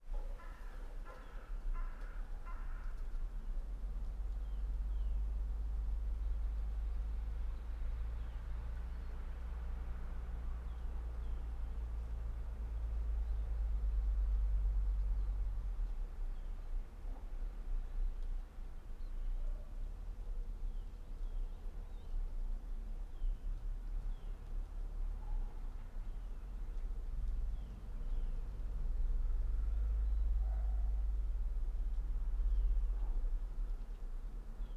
{
  "title": "all the mornings of the ... - feb 23 2013 sat",
  "date": "2013-02-23 08:32:00",
  "latitude": "46.56",
  "longitude": "15.65",
  "altitude": "285",
  "timezone": "Europe/Ljubljana"
}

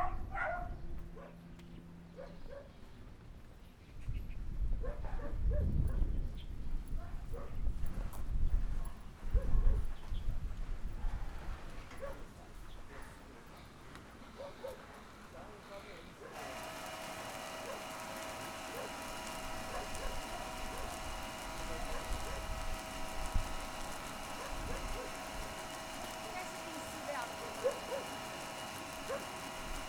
{
  "title": "Fangliao Rd., Fangyuan Township - Dogs barking",
  "date": "2014-01-04 08:47:00",
  "description": "in the Pig workshop, Dogs barking, Feed delivery piping voice, Zoom H6",
  "latitude": "23.92",
  "longitude": "120.33",
  "altitude": "7",
  "timezone": "Asia/Taipei"
}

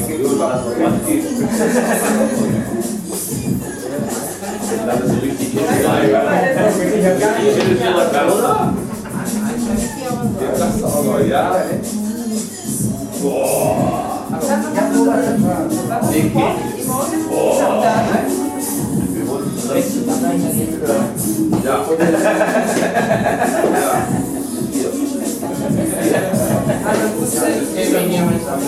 {
  "title": "gelsenkirchen-horst, harthorststrasse - alte schmiede",
  "date": "2009-08-27 22:16:00",
  "latitude": "51.53",
  "longitude": "7.02",
  "altitude": "31",
  "timezone": "Europe/Berlin"
}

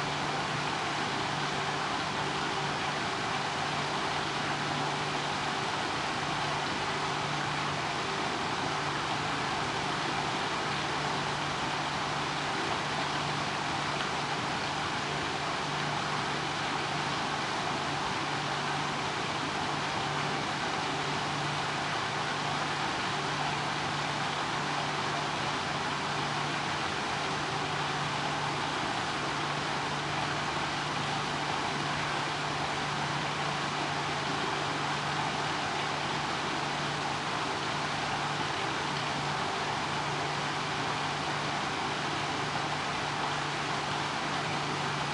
{
  "title": "Kleine Dijk, Diksmuide, Belgium - Flanders Rain & Drone",
  "date": "2017-05-09 20:00:00",
  "description": "Recorded with a Marantz PMD661 and a stereo pair of DPA 4060s",
  "latitude": "51.03",
  "longitude": "2.86",
  "altitude": "3",
  "timezone": "Europe/Brussels"
}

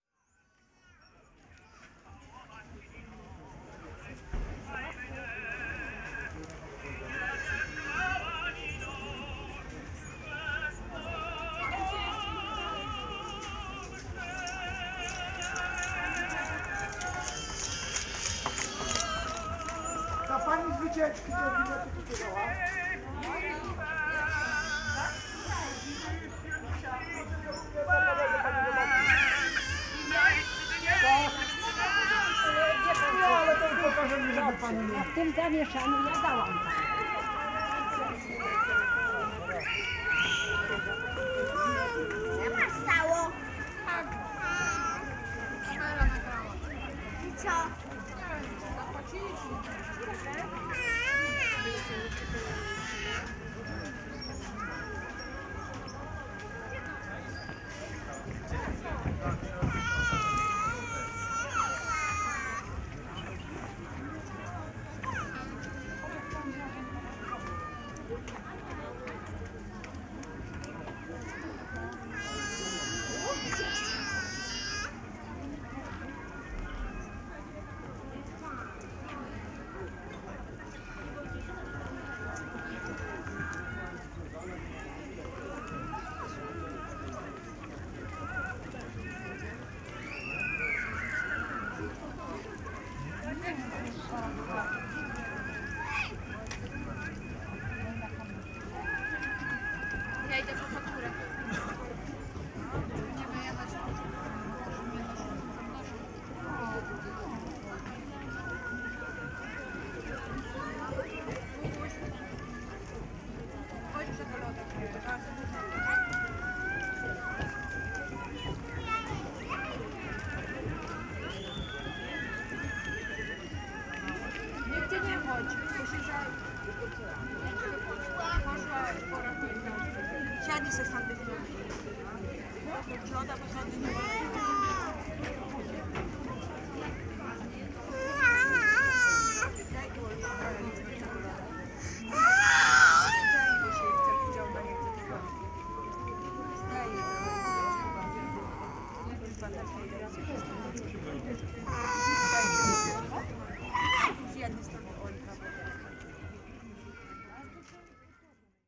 {
  "title": "Singing duet at Parkowa Hill, Krynica-Zdrój, Polska - (651 BI) Kiepura vs Newborn singer",
  "date": "2020-07-25 16:45:00",
  "description": "Recording of people at Parkowa Hill - background singing of Jan Kiepura mixes with a newborn shriek.\nRecorded with DPA 4560 on Sound Devices MixPre6 II.",
  "latitude": "49.42",
  "longitude": "20.97",
  "altitude": "732",
  "timezone": "Europe/Warsaw"
}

